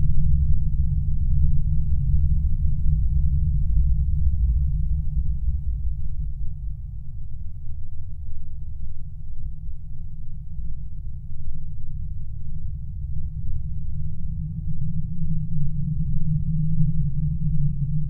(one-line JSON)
{"title": "Utena, Lithuania, fence-geophone", "date": "2020-02-06 17:45:00", "description": "temporary sonic intrusion into into the cityscape. some new building surrounded by metallic fence. lowest drone recorded with LOM geophone.", "latitude": "55.50", "longitude": "25.60", "altitude": "102", "timezone": "Europe/Vilnius"}